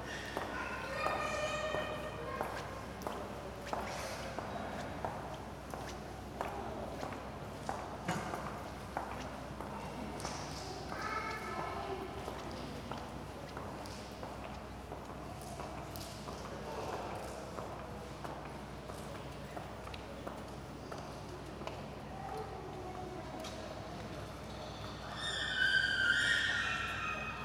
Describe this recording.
Children running and screaming in corridor of Harpa concert hall